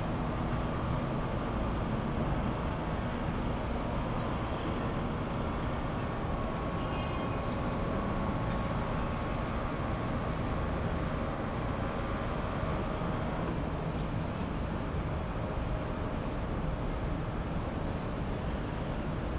대한민국 서울특별시 서초구 서초2동 1335-3 - Construction Yard, Machine Rumble, Cicada
Construction Yard, Machine rumbling noise, Cicada
공사장, 굴삭기, 매미